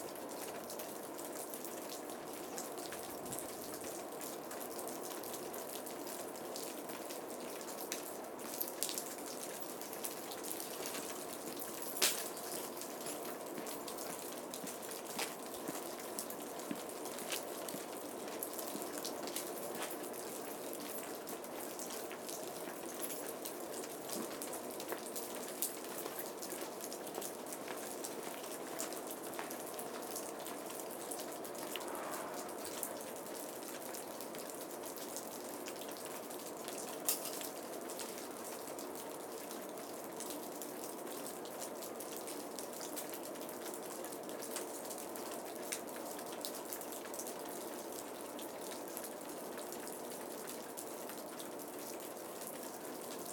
Fitness Center, Ithaca, NY, USA - Ice melt (dpa stereo mix)
Ice droplets from the roof of the Fitness Center. Two sets of footsteps pass, one starting from the left and one starting from the right.
Recorded with two DPA 4060 lavaliers (spaced roughly 6m apart)
New York, United States